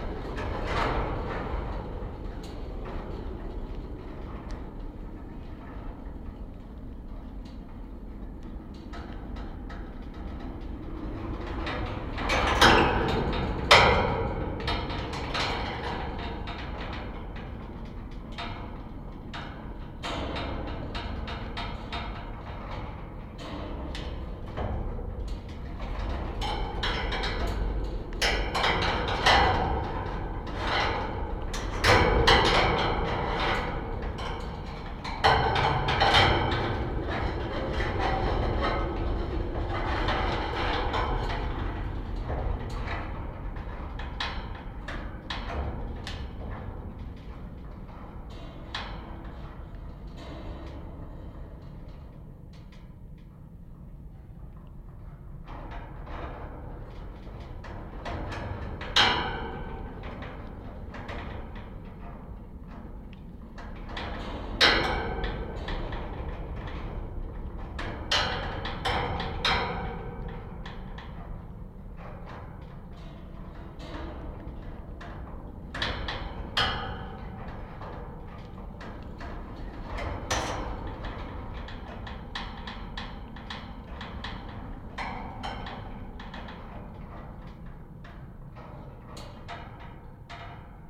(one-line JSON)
{"title": "Gėlių g., Ringaudai, Lithuania - Construction site wire fence", "date": "2021-04-15 19:00:00", "description": "Quadruple contact microphone recording of a construction site fence near a newly built IKI store. Mostly just wind rattling the wire fence. Recorded using ZOOM H5.", "latitude": "54.89", "longitude": "23.81", "altitude": "82", "timezone": "Europe/Vilnius"}